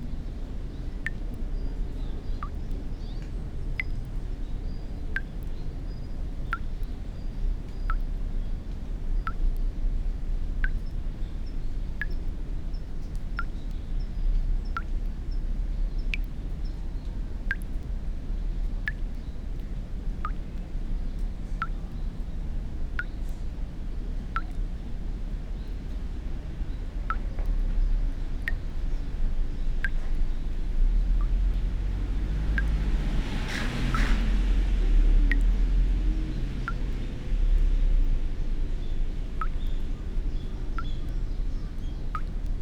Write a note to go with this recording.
small garden with beautiful ancient peach tree and one drop water music, writing words, reading poems, fragments of recorded world listens to its future